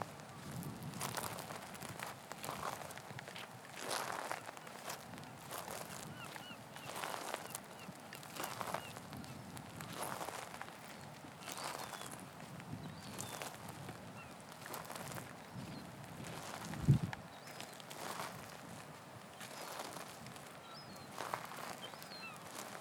Unnamed Road, Prestatyn, UK - Gronant Beach Walking Meditation
Kinh Hanh (walking meditation) eastwards, towards the lighthouse, along the sand and gravel beach path. Recorded on a Tascam DR-40 using the on-board microphones as a coincident pair with windshield. Low-cut at 100Hz to reduce wind and handling noise.